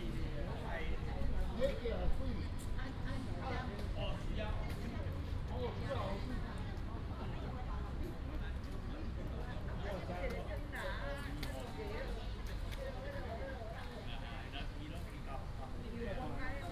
西雅里, Hsinchu City - A lot of old people in the park
old people playing chess, A lot of old people in the park, fighter, traffic sound, birds sound, Binaural recordings, Sony PCM D100+ Soundman OKM II